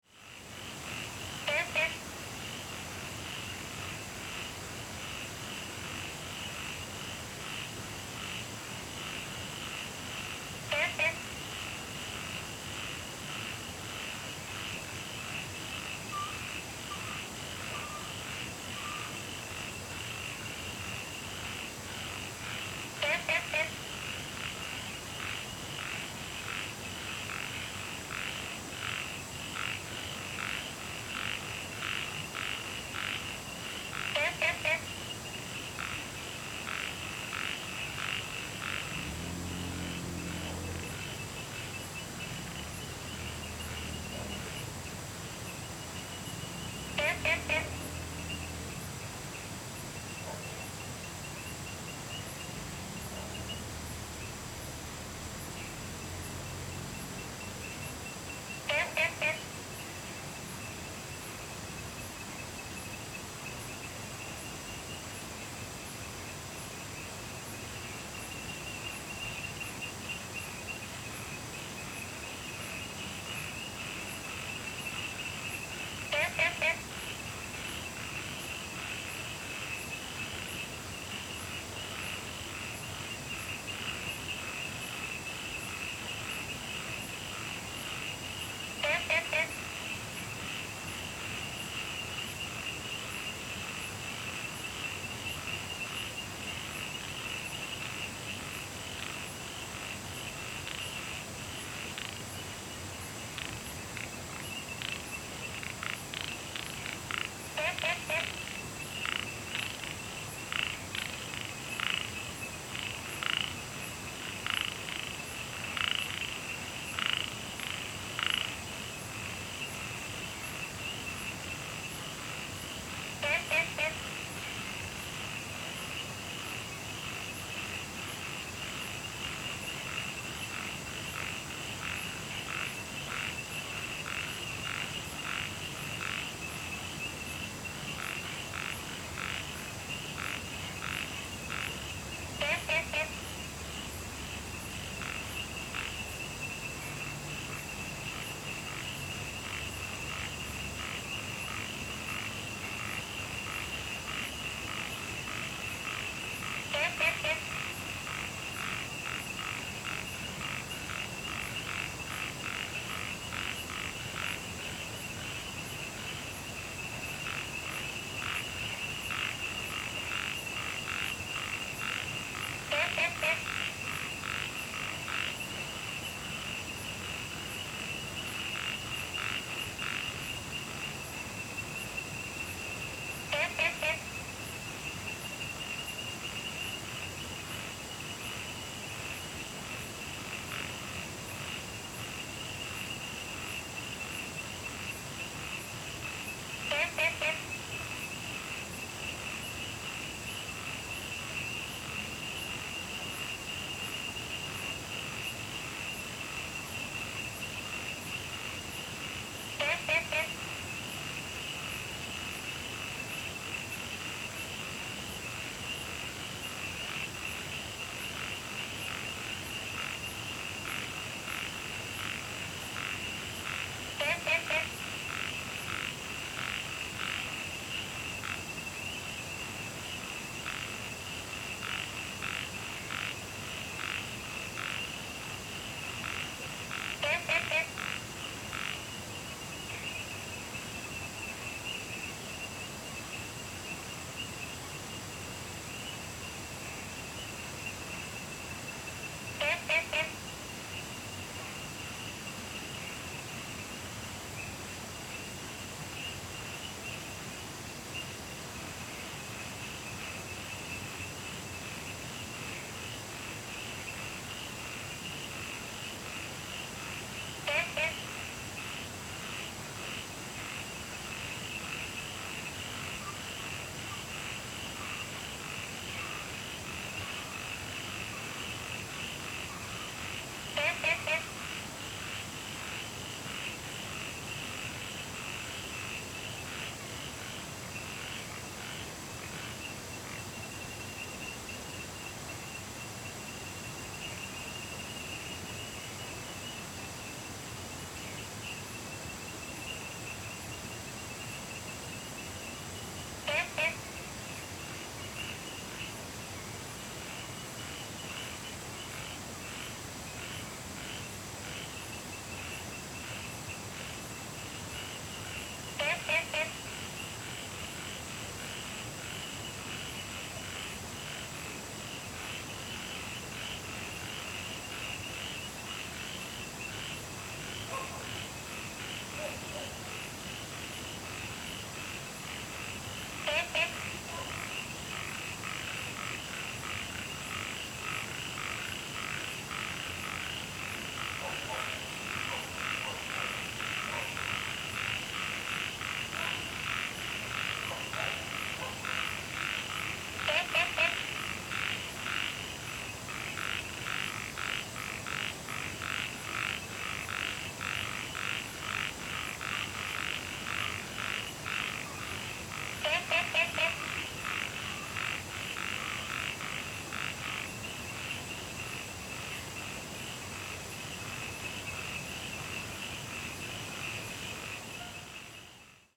茅埔坑溼地, 南投縣埔里鎮桃米里 - Frogs chirping
Frogs chirping, Insects sounds, The sound of water streams, Wetland
Zoom H2n MS+ XY